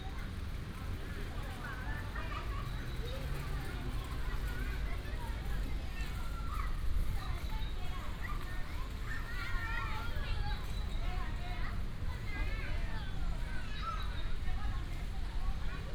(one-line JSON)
{"title": "青年公園, Taipei City - in the Park", "date": "2017-04-28 15:20:00", "description": "in the Park, traffic sound, bird sound, Children's play area", "latitude": "25.03", "longitude": "121.51", "altitude": "17", "timezone": "Asia/Taipei"}